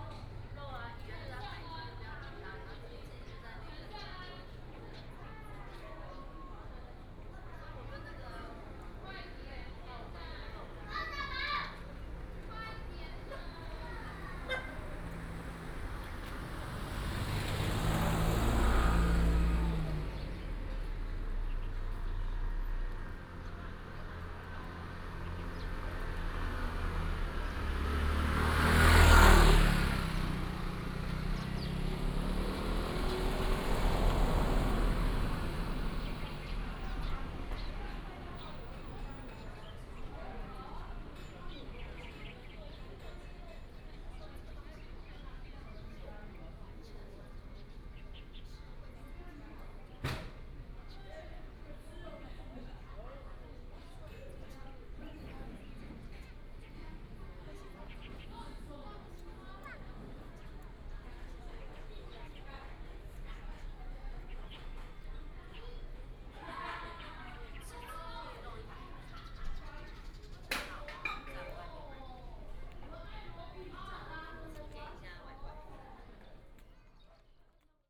Paiwan tribe, traffic sound, Birds sound